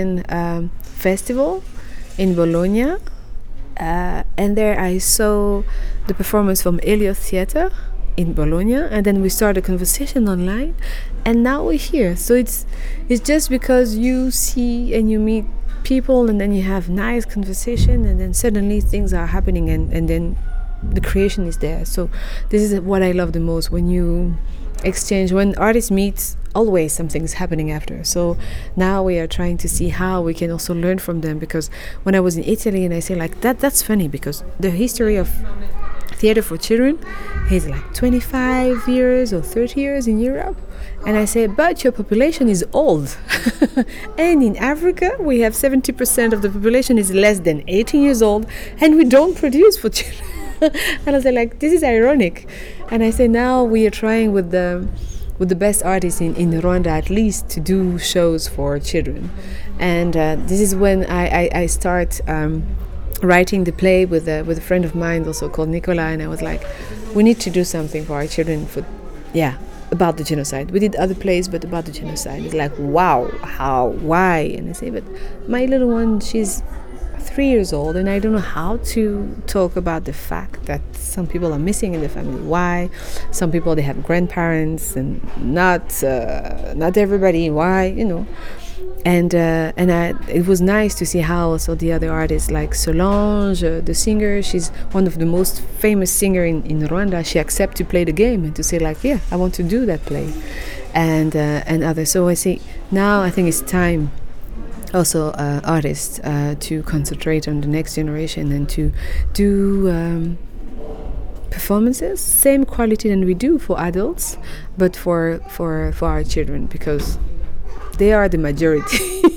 City Library, Hamm, Germany - Learning together as artists in Africa… and now here in Germany…
Carole talks about her encounter with African women artists in South Africa, Kenya, Zambia, Zimbabwe… learning from each other and from history. She adds the story, of how they got to be at the Children’s Theatre Festival in Hamm now and her fist encounters with young audiences here…
Carole’s entire footage interview is archived here:
16 June 2014, 16:23